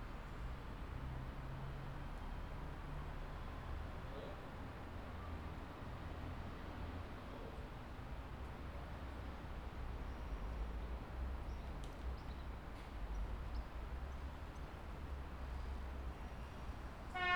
Wakefield Westgate train station, Wakefield, UK - Wakefield Westgate station
Sitting on the platform, waiting for a train.